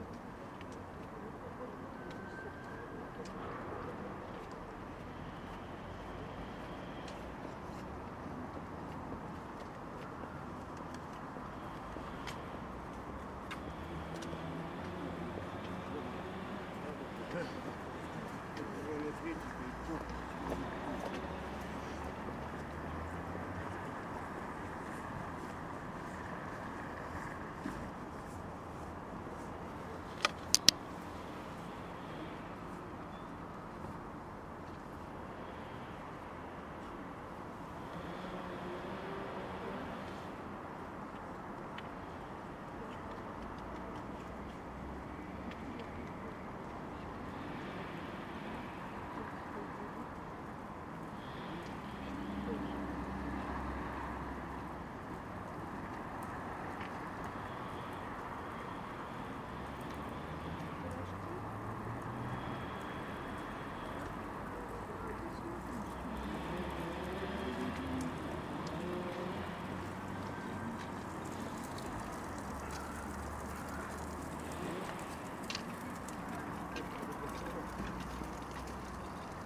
ул. Донская, Москва, Россия - Donskoy Monastery
The territory of the Donskoy Monastery. I sat on a bench and listened to what was happening around me. Frosty winter day, January 27, 2020. Recorded on a voice recorder.